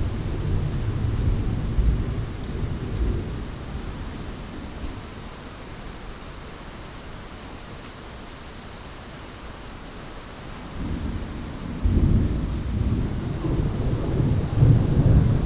基隆火車站, Keelung City - Thunderstorms
Thunderstorms
Binaural recordings
Sony PCM D100+ Soundman OKM II